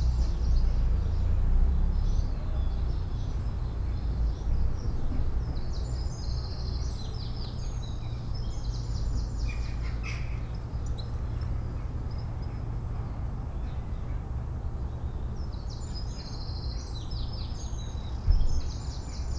inside church porch ... outside church yard ... All Saints Church ... Kirkbymoorside ... lavalier mics clipped to sandwich box ... bird calls ... song ... from ... dunnock ... goldfinch ... house sparrow ... blue tit ... robin ... jackdaw ... collared dove ... wood pigeon ... carrion crow ... background noise ...
5 March 2019, 08:20